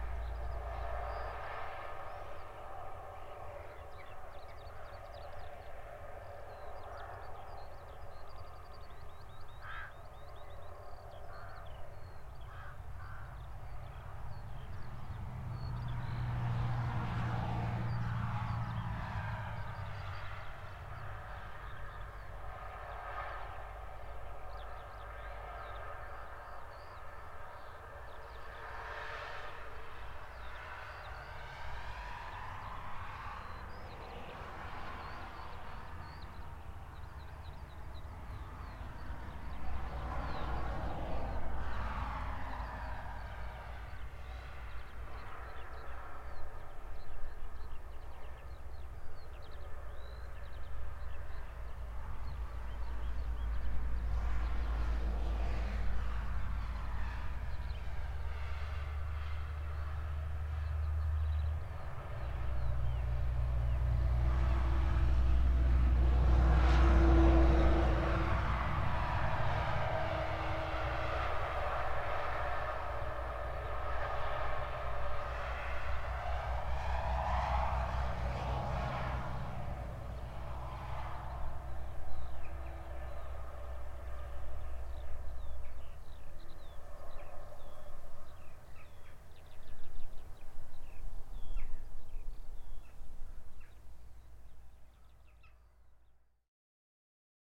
{
  "title": "Utena, Lithuania, birds and trucks",
  "date": "2021-03-28 15:40:00",
  "description": "highway listening from abandoned building",
  "latitude": "55.49",
  "longitude": "25.65",
  "altitude": "150",
  "timezone": "Europe/Vilnius"
}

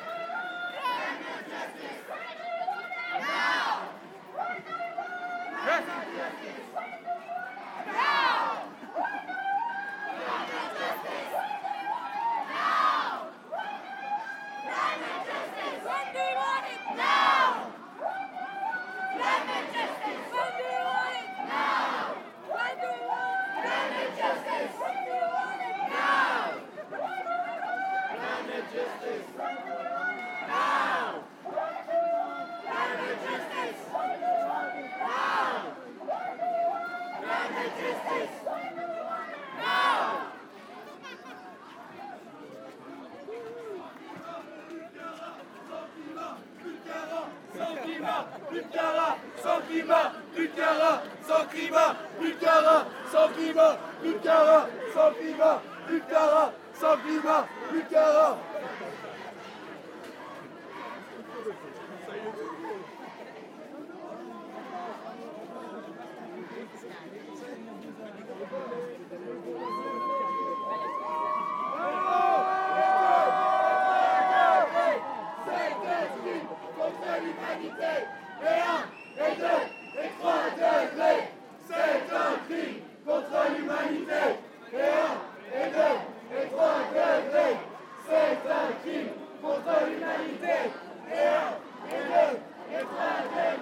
{
  "title": "Ottignies-Louvain-la-Neuve, Belgique - Youth For Climate",
  "date": "2019-03-07 11:30:00",
  "description": "Non-violent demonstration in Louvain-La-Neuve : Youth For Climate. The students boycott school and demonstrate in the street. They want actions from the politicians. Very much wind, a little rain and 3000 young people shouting.",
  "latitude": "50.67",
  "longitude": "4.61",
  "altitude": "119",
  "timezone": "Europe/Brussels"
}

